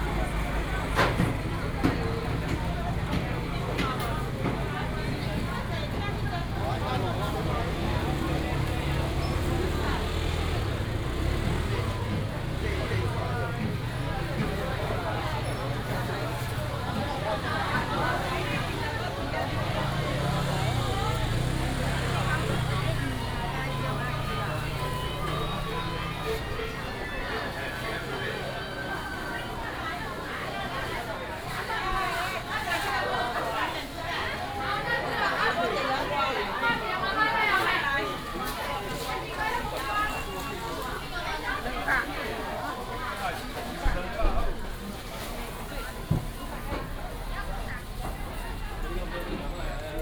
Walking in the traditional market, lunar New Year, traffic sound
Binaural recordings, Sony PCM D100+ Soundman OKM II
Dalin Township, Chiayi County, Taiwan, 15 February, 10:21